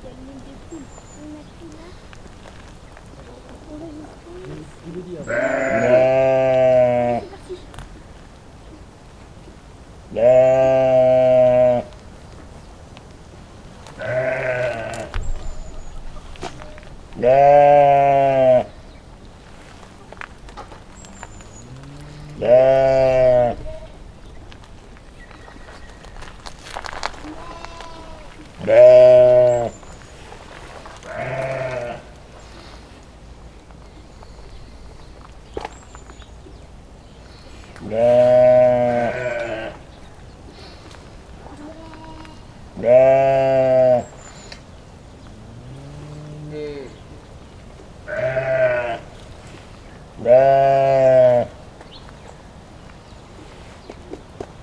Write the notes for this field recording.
Moutons au jardin François, Zoom H6 et micros Neumann